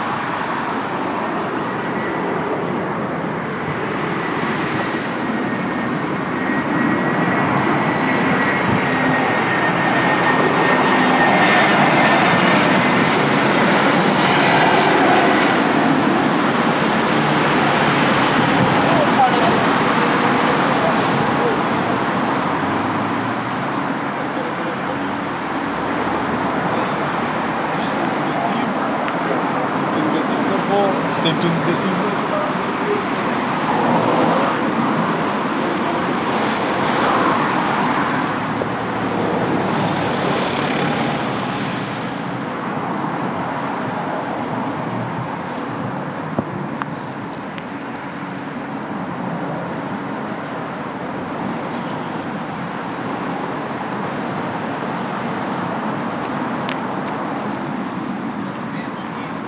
Campo Grande, Lisboa, Portugal - To the Lusófona University
Recording from a Iphone at Lusófona University.
Lisbon, Portugal, 12 January 2012